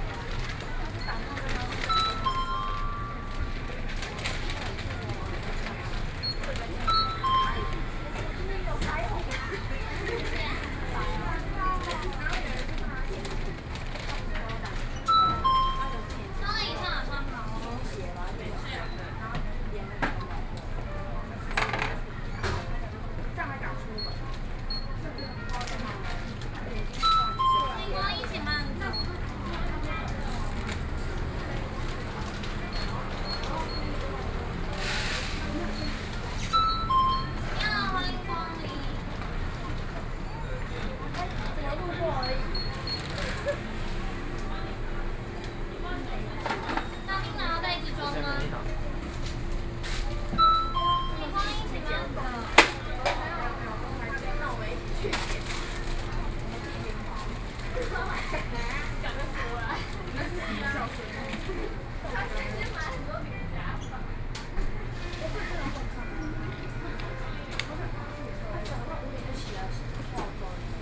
Zhongshan Rd., East Dist., Chiayi City - Checkout
in the Convenience stores, Sony PCM D50 + Soundman OKM II